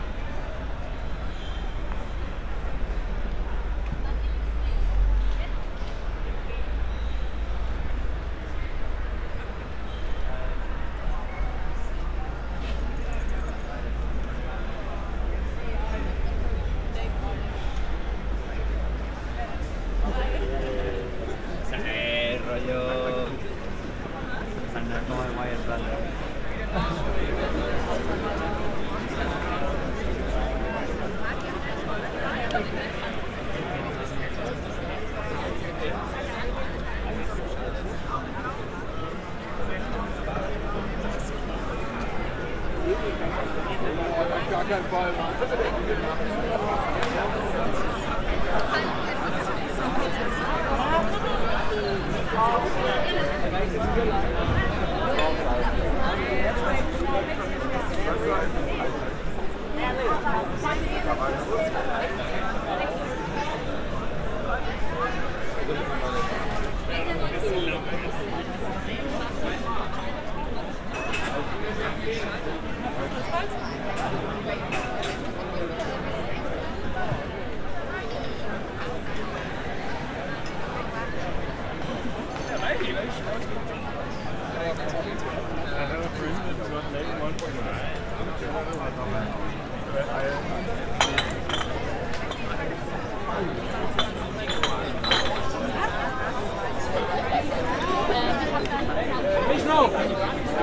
Brüsseler Platz, Köln, Deutschland - midnight ambience
World Listening Day: midnight ambience at Brüsseler Platz, Cologne. Many people are hanging out here in warm summer nights, which provokes quite some conflicts with neighbours.
(live broadcast on radio aporee, captured with an ifon, tascam ixj2, primo em172)